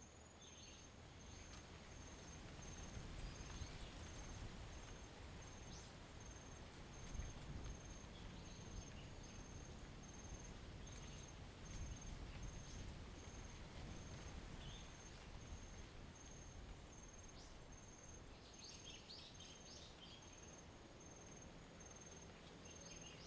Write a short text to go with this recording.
Birds singing, In the woods, Wind, Zoom H2n MS +XY